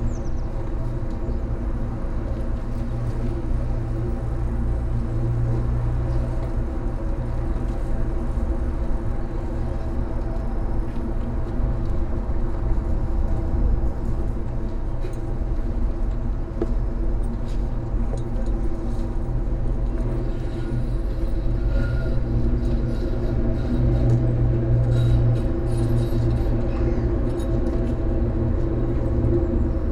Tallinn, Baltijaam terrace poles - Tallinn, Baltijaam terrace poles (recorded w/ kessu karu)
hidden sounds, resonance inside two poles at the edge of a cafe tarrace at Tallinns main train station.
Tallinn, Estonia, 21 April 2011, 13:24